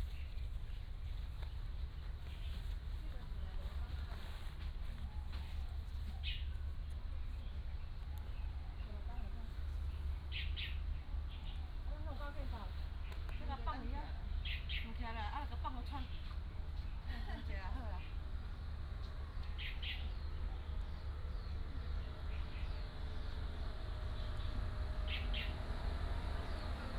蛤板灣, Hsiao Liouciou Island - Birds singing
Birds singing
Binaural recordings
Sony PCM D100+ Soundman OKM II
Pingtung County, Taiwan, 2 November 2014